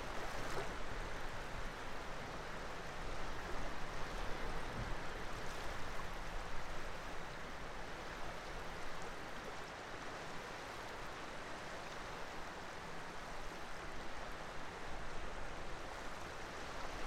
Piyale Paşa, Larnaka, Cyprus - Larnaca Beach Morning
Was recorded by Tascam iM2 with Iphone 4s in the morning by the sea.